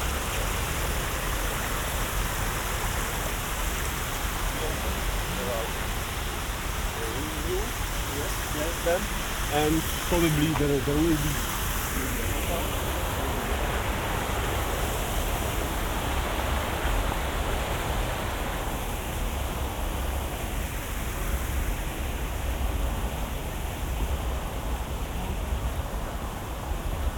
Wyspa Młyńska, Bydgoszcz, Poland - (837a BI) Soundwalk in the evening
A Sunday evening soundwalk through the island: some fountain sounds, teenagers partying etc...
Recorded with Sennheiser Ambeo binaural headset on an Iphone.
województwo kujawsko-pomorskie, Polska, 26 September 2021